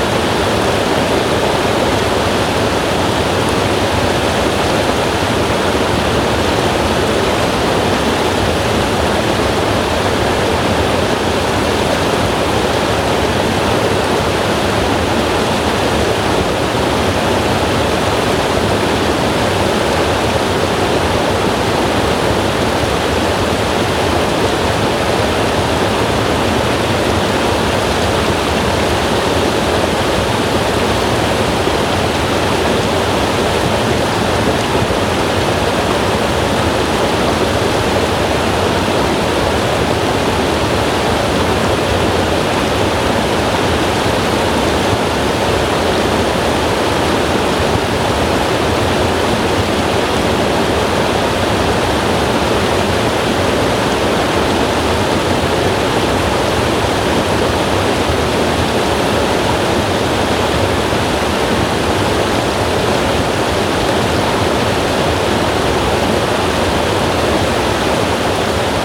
Route du Châtelard, Liddes, Suisse - Torrents DAron in winter
A little river in Wallis (Swiss) under the ice and snow. A cold day -10c (14F). Record with a Zoom and rework at home.